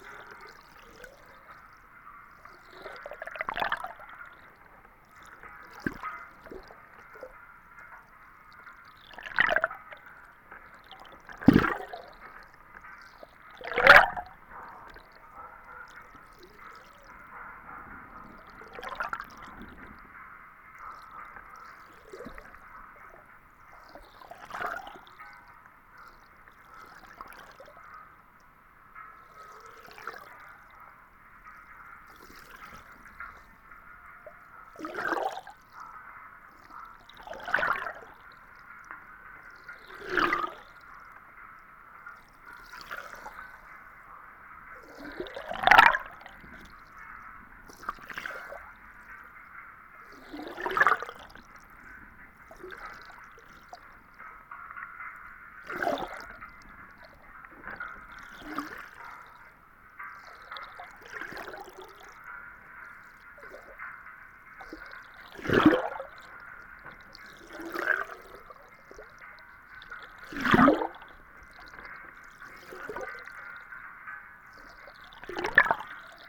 Antalgė, Lithuania, sculpture Aquarius
Open air sculpture park in Antalge village. There is a large exposition of metal sculptures and instaliations. Now you can visit and listen art. Recorded with hydrophones.
Utenos apskritis, Lietuva, 24 July